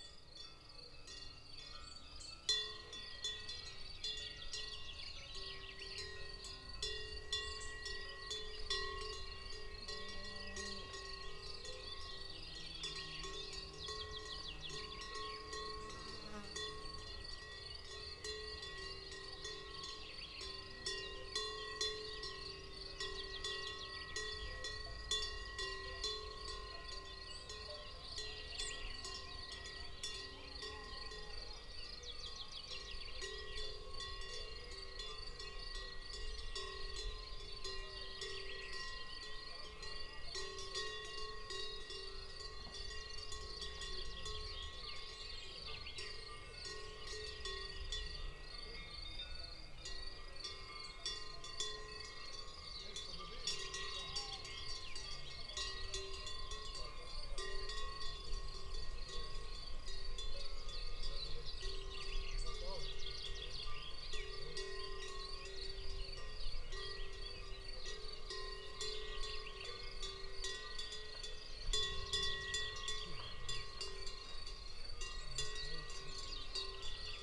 Tolmin, Slovenia - Cows with bells
Cows on pasture. Lom Uši pro, mixPreII
Slovenija, 25 June, 08:10